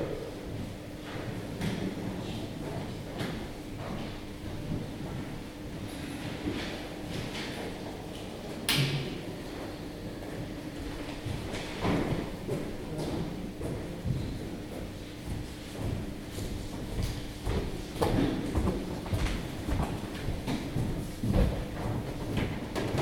Victoria and Albert Museum, South Kensington, London, United Kingdom - National Art Library, reading room
sounds in the reading room of National Art Library, London
London, UK, 20 October 2012